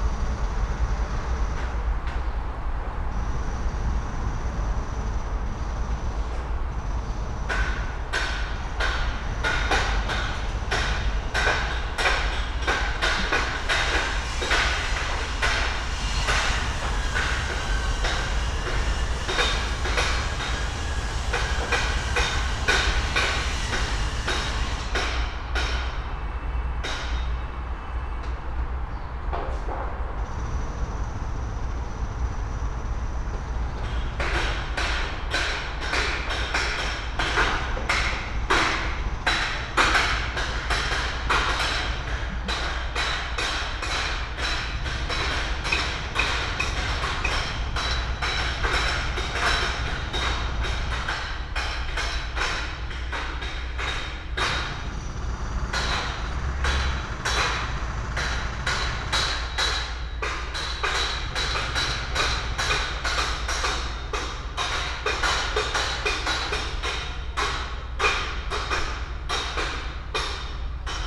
Elgar Road, Reading, UK - Construction site building sounds
The continuing progress of 112 new homes being built across the river from where i live. Sony M10 with custom made boundary device using a pair of Primo omni mics.